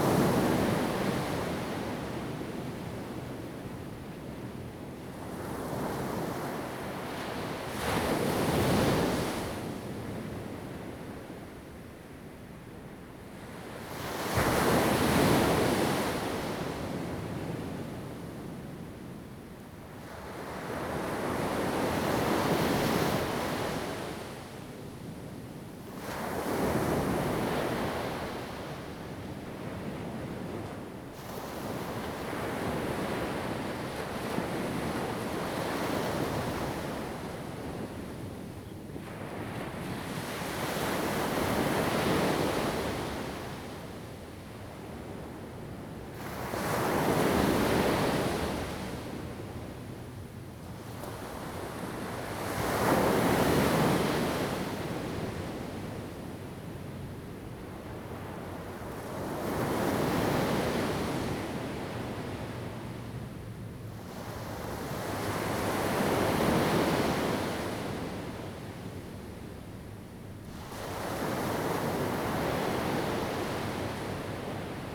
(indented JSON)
{
  "title": "Qianshuiwan, Sanzhi Dist., Taiwan - At the beach",
  "date": "2016-04-15 06:59:00",
  "description": "At the beach, Sound of the waves\nZoom H2n MS+XY + H6 XY",
  "latitude": "25.25",
  "longitude": "121.47",
  "altitude": "1",
  "timezone": "Asia/Taipei"
}